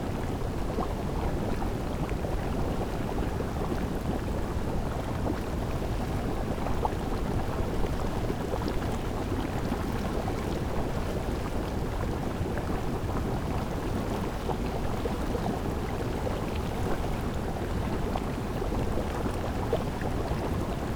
São Miguel-Azores-Portugal, Caldeira Velha, Fumarola-natural boilling water